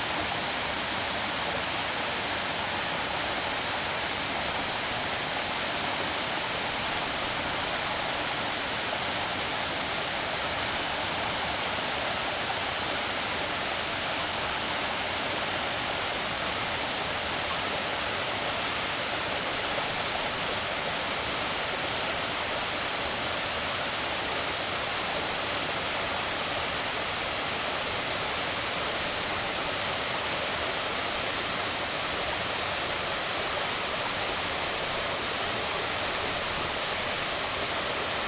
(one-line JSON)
{"title": "Guanyin Waterfall, Puli Township - waterfall and stream", "date": "2016-12-13 14:18:00", "description": "waterfalls, stream\nZoom H2n MS+ XY", "latitude": "23.99", "longitude": "121.04", "altitude": "686", "timezone": "GMT+1"}